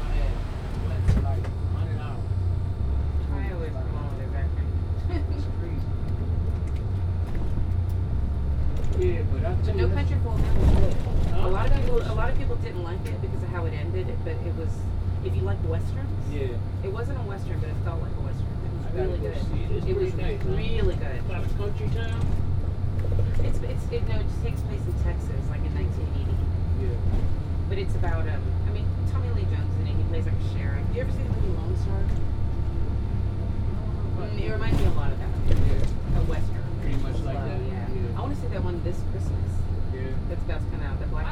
neoscenes: bus ride into Manhattan
NJ, USA, 9 December 2007